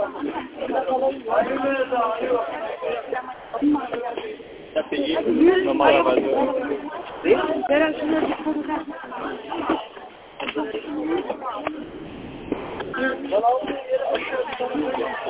Der Landwehrkanal (8) - Wochenmarkt Maybachufer 20.04.2007 17:53:08
Berlin, Germany